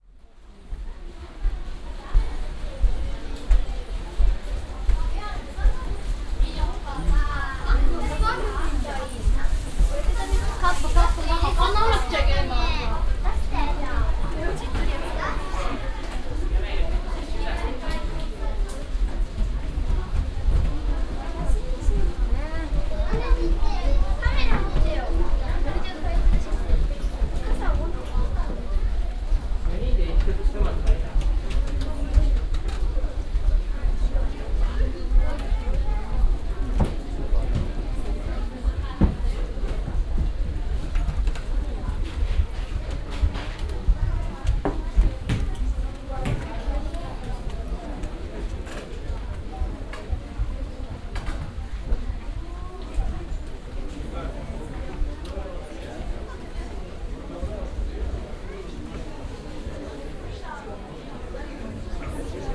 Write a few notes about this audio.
inside one of the temple buildings, steps of the barefeet visitors, international city scapes and topographic field recordings